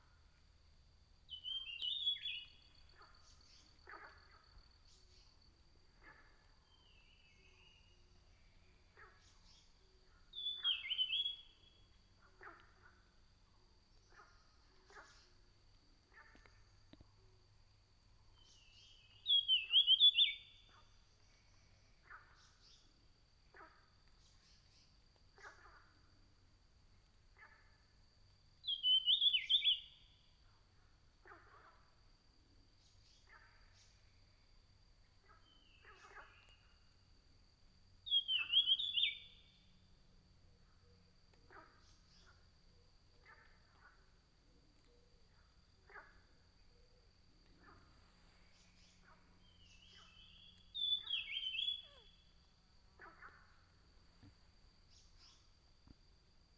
魚池鄉五城村三角崙, Taiwan - in the woods
birds sound, Ecological pool, frogs chirping, in the woods